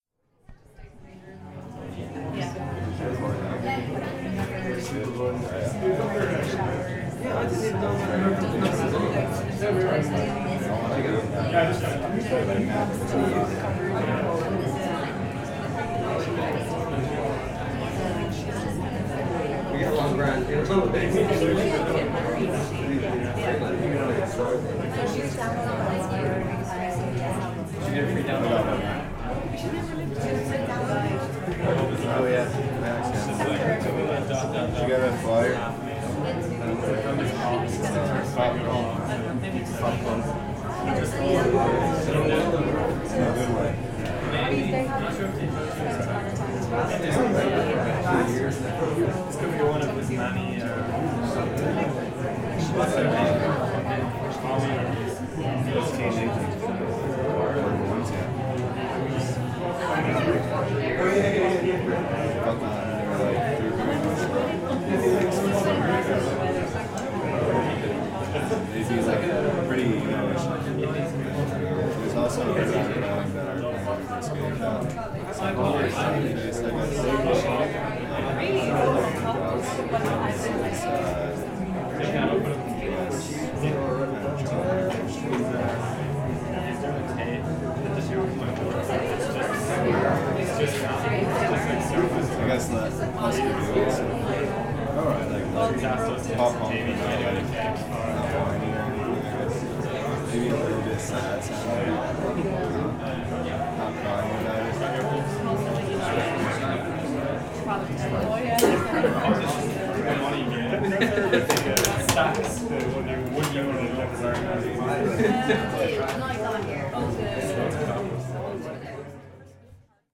The Joinery, Arran Quay, Dublin, Ireland - The Sunken Hum Broadcast 160 - Crowd Chatter at the Hunters Moon All Dayer- 9 June 2013
Crowd Chatter between acts at the Hunters Moon All Dayer.
County Dublin, Leinster, Republic of Ireland